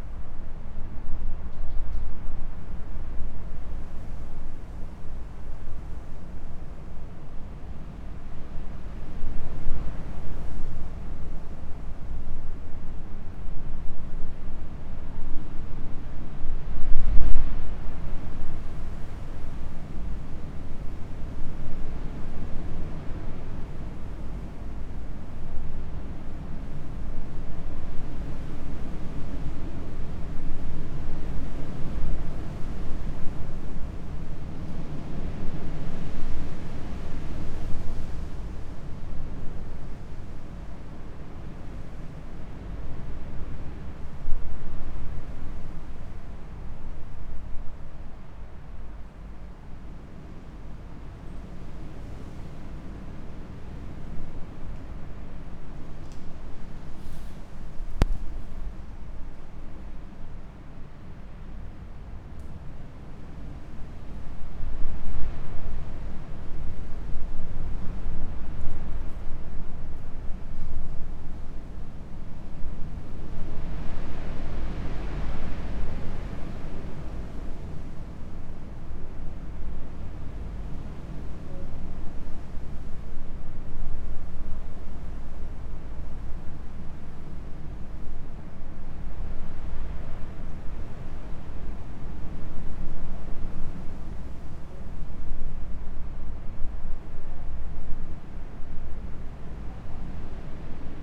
church, migojnice, slovenija - arched atrium
night sonic scape, full moon, strong wind all around, from within the atrium
Griže, Slovenia, 15 February 2014